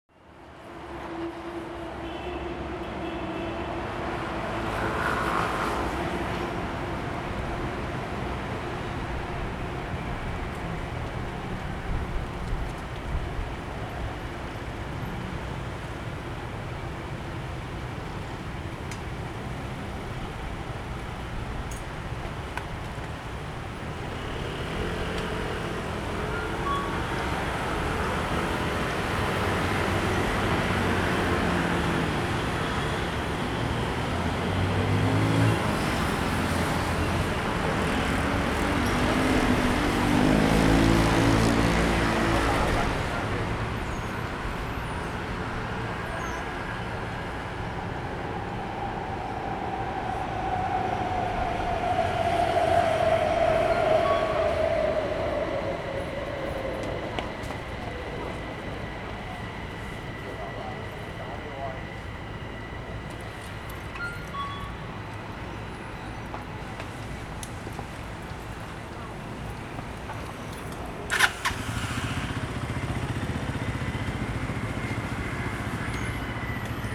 Nanzih District, Kaohsiung - Traffic Noise
In front of the entrance convenience stores, Traffic Noise, Sony ECM-MS907, Sony Hi-MD MZ-RH1
29 March, 15:37